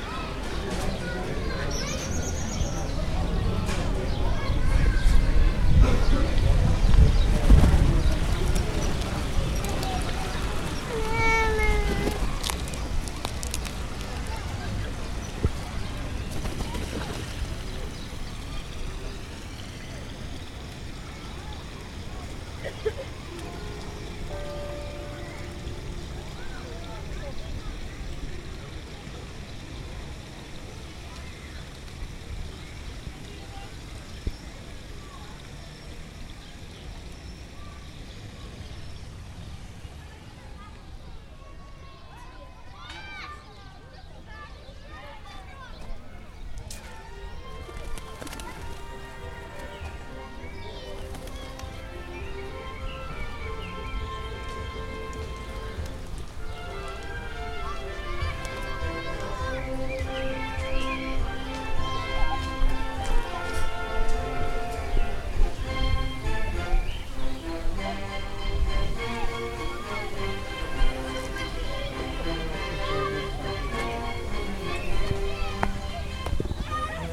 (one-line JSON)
{"title": "Jardim da Estrela", "description": "A recording made during a concert day in this park.", "latitude": "38.72", "longitude": "-9.16", "altitude": "85", "timezone": "Europe/London"}